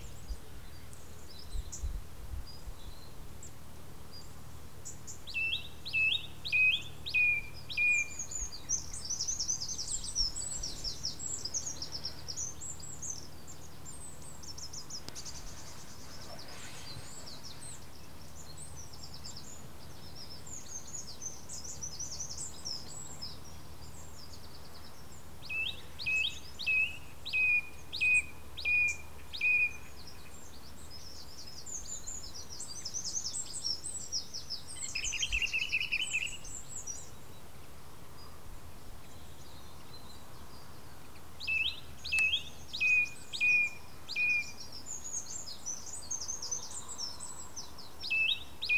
Hambledon Hill View Campsite, Hammoon, Sturminster Newton, UK - Early morning in the field
Faint sound of cars somewhere, plenty of birds, cock crowing and not much else.